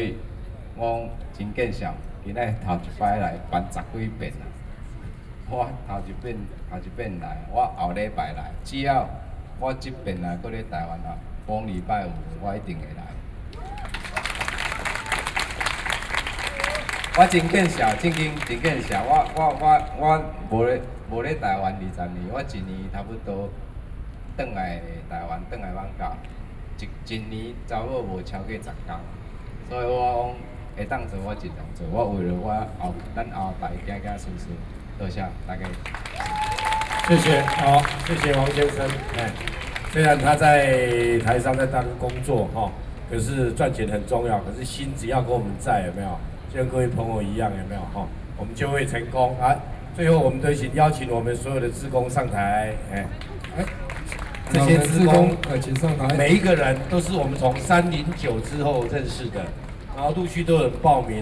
National Chiang Kai-shek Memorial Hall, Taipei - Civic Forum
anti–nuclear power, Civic Forum, Sony PCM D50 + Soundman OKM II
中正區 (Zhongzheng), 台北市 (Taipei City), 中華民國, 14 June